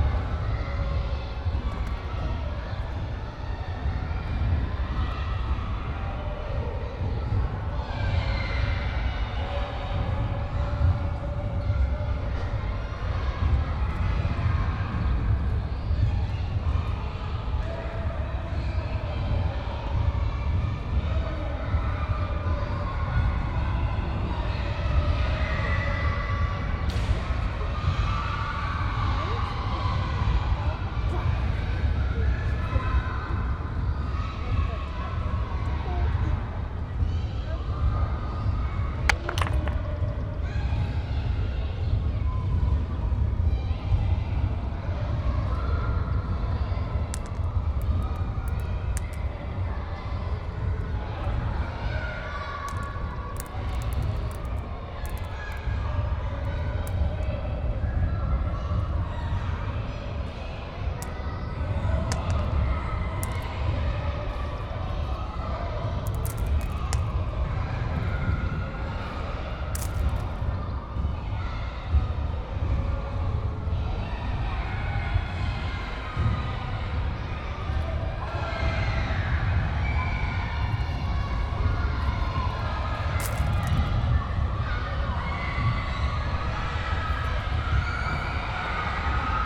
OŠ Frana Erjavca, Nova Gorica, Slovenija - OŠ Frana Erjavca
An outside recording of kids playing inside the gym.
Nova Gorica, Slovenia